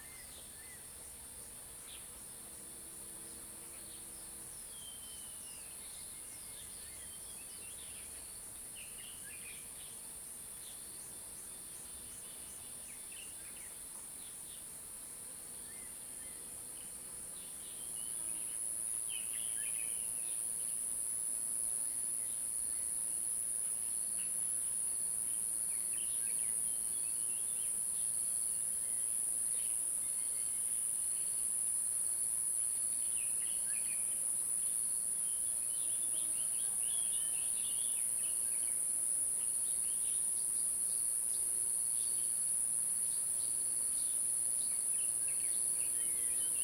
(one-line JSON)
{"title": "頂草楠, 埔里鎮桃米里 - Bird sounds", "date": "2016-06-07 11:38:00", "description": "Bird sounds, Insect sounds\nZoom H2n MS+XY", "latitude": "23.94", "longitude": "120.91", "altitude": "573", "timezone": "Asia/Taipei"}